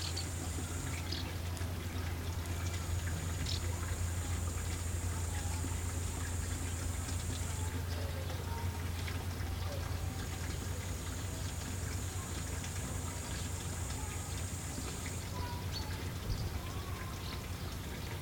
Viraksaare, Estonia - evening sounds around summerhouses
birds, voices, door slams, tin roof snaps, bushcrickets
Paide vald, Järva County, Estonia, 10 July 2010